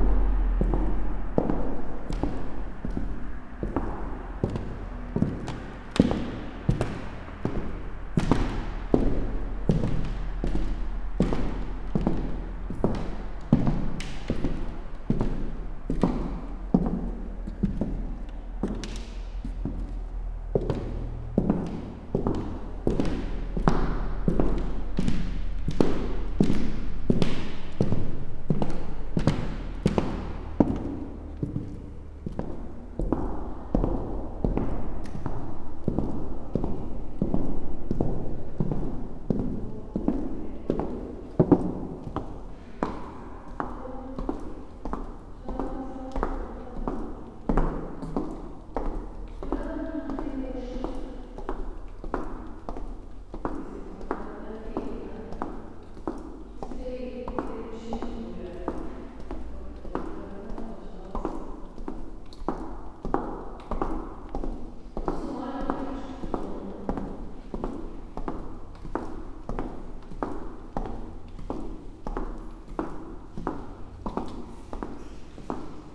Muzej norosti, Museum des Wahnsinns, Trate, Slovenia - with clogs, walking the rooms
listening to the rooms, echoing them with clogs, just a decade ago madhouse was here, now castle is empty most of the time, silently waiting for the future, listening to lost voices ...
Zgornja Velka, Slovenia, 3 June